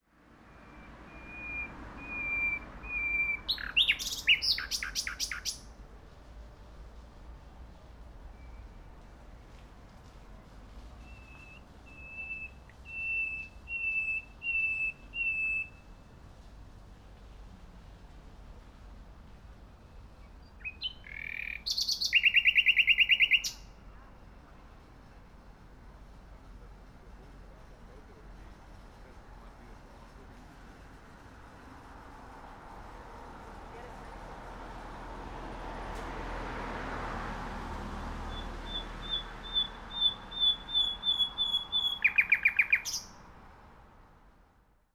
berlin, maybachufer - night bird
night bird aside the street, unimpressed by cars and pedestrians.